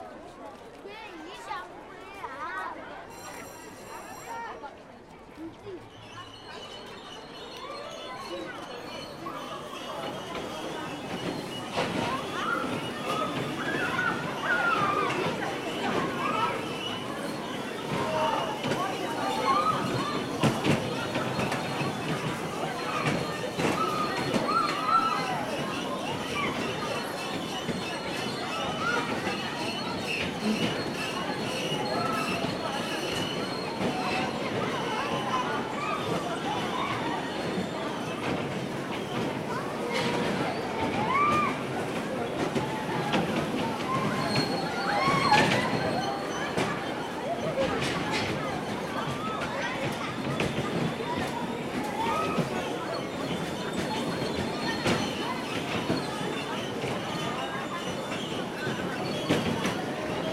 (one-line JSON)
{"title": "National amusement park, Ulaanbaatar, Mongolei - auto scooter", "date": "2013-06-01 15:25:00", "description": "there are cars in mongolia for children, they drive but the parents have the remote control. this is a normal auto scooter - with the difference that there is no music that would made these sounds inaudible", "latitude": "47.91", "longitude": "106.92", "altitude": "1293", "timezone": "Asia/Ulaanbaatar"}